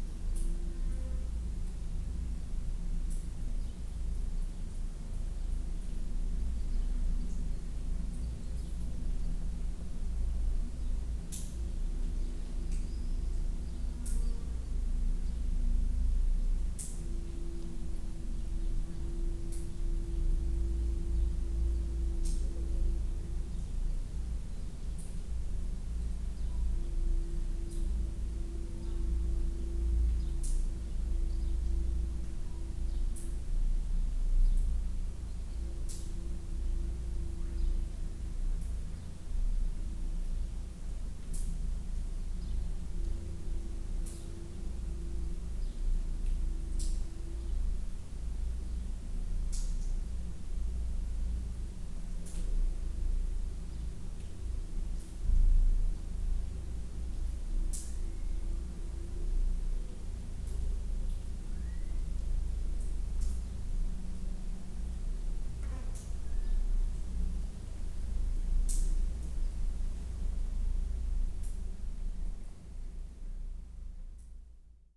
Tempelhofer Feld, Berlin, Deutschland - within deep manhole
havn't recognized this about 4 to 5 meter deep manhole before. standing wave of low frequency inside, and some dripping water. will reviste when outside sounds are present, which will probably influence the resonance inside. hot and quiet saturday morning.
Sony PCM D50, DPA4060)
Berlin, Germany, July 2013